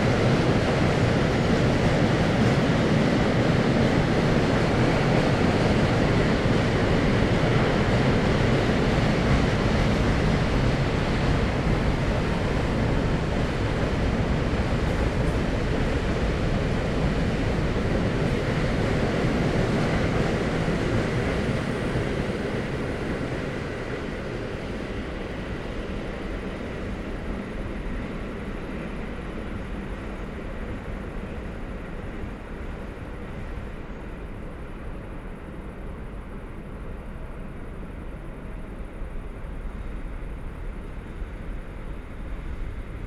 Neustadt-Nord, Cologne, Germany - trains at night
Köln, Schmalbeinstr. night ambience, trains of all sort pass here at night, but the cargo trains are most audible because of their low speed and squeaky brakes. it's the typical night sound in this area
(Sony PCM D50, DPA4060)